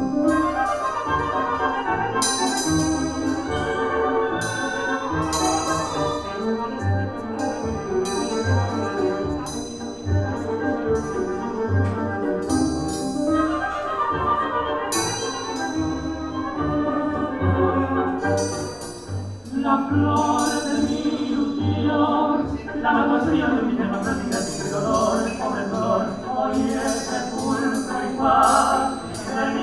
foyer, hotel piramida - tango, metal coat hangers